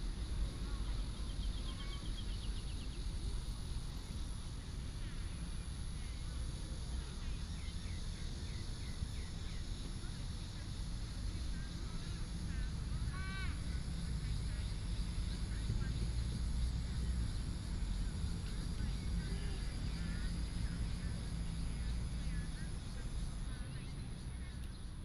New town park, Traffic sound, Child, birds sound
Taoyuan City, Taiwan, 10 July 2017